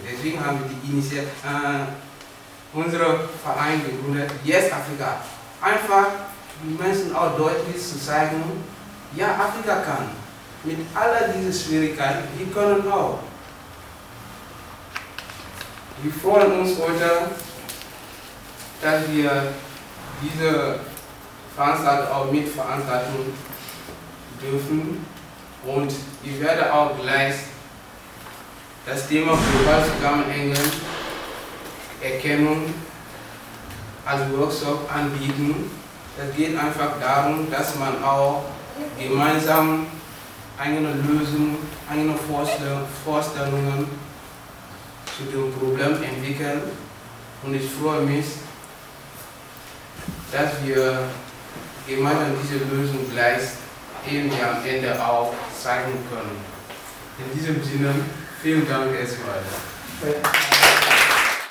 VHS, Hamm, Germany - Nelli's welcome speech...
These recordings were made during the "Empowerment Day" of Yes-Afrika e.V. in Hamm, Germany.